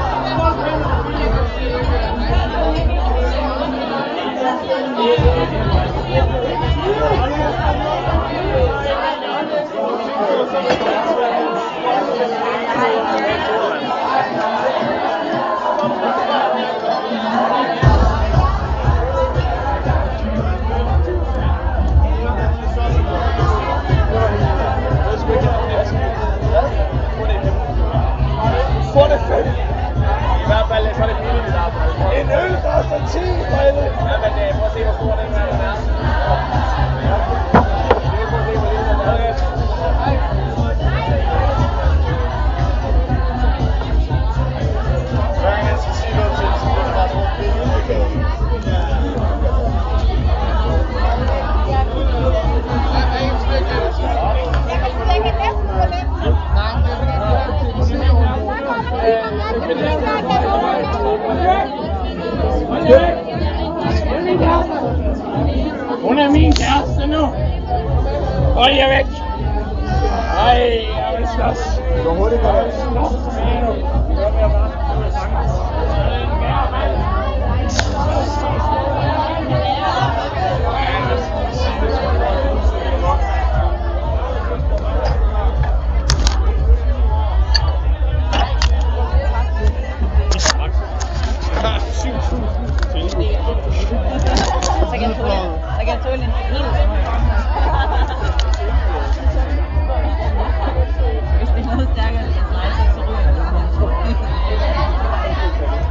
Das große Blabla Teil 2
randomly passed student party, entered and got drunk. the ever amplified volume of sounds having to surpass each other will definitely end up in terrible humanoid noise.